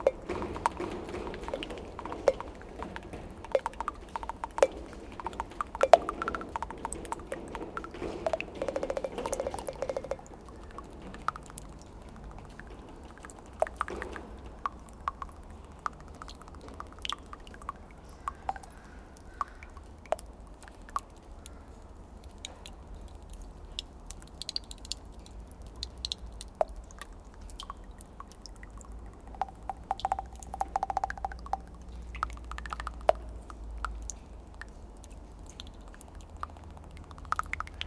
Into and abandoned factory, rain is falling on a very old piece of dead cloth. The rag makes some strange bubbles below the puddle. When drops are falling onto, it produces a curious music.
Seraing, Belgium